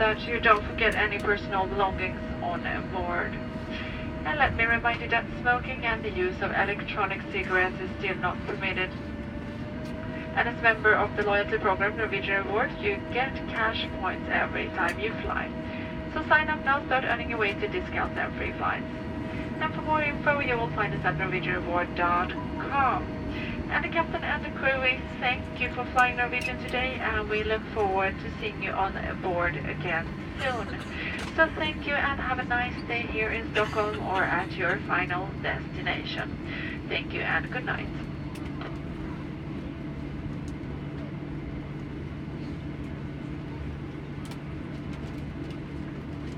Arlanda Airport Runway - Norwegian Airlines arrival to Arlanda
A Norwegian Airlines arriving to Arlanda airport and getting towards it's gate.
Stockholms län, Sverige, 26 March 2018